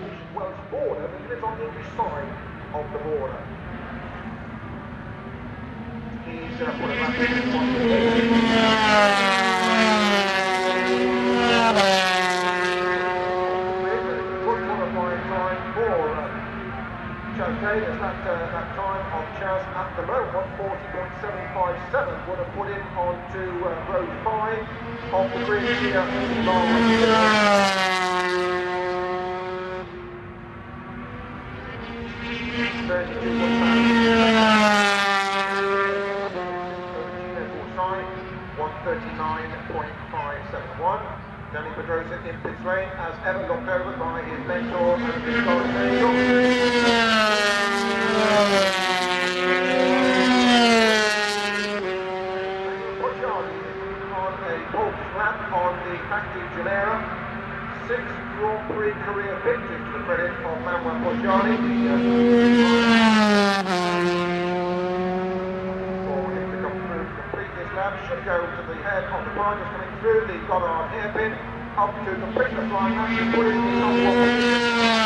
Castle Donington, UK - British Motorcycle Grand Prix 2002 ... 125 ...
British Motorcycle Grand Prix ... 125 qualifying ... one point stereo mic to minidisk ... commentary ... a young Danny Pedrosa with a second on the grid ..?
Derby, UK, 12 July 2002, 13:15